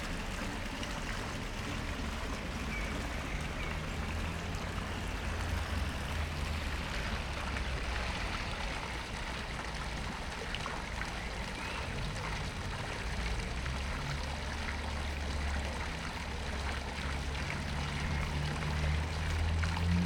Fontaine Honfleur
Fontaine intermédiaire entre les deux deux lavoirs Saint Léonard à Honfleur (Calvados)